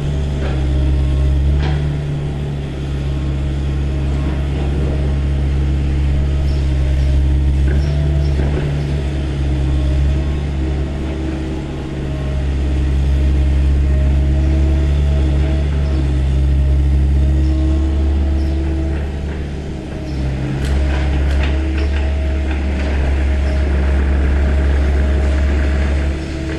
Easter Rd, Edinburgh, UK - Lidl construction site
Lidl construction site, Easter Road, 19th June 2018, recorded from my bedroom window
12 June